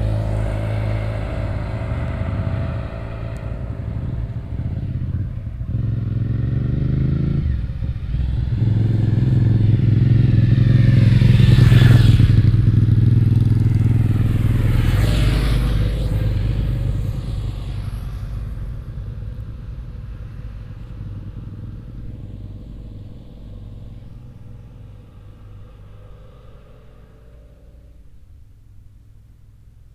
road to vianden, motorbikes
A group of motorbikes driving down the road to Vianden.
Straße nach Vianden, Motorräder
Eine Gruppe von Motorrädern fahren hinunter auf der Straße nach Vianden.
Route de Vianden, motocycles
Un groupe de motards remonte la route en direction de Vianden.
Project - Klangraum Our - topographic field recordings, sound objects and social ambiences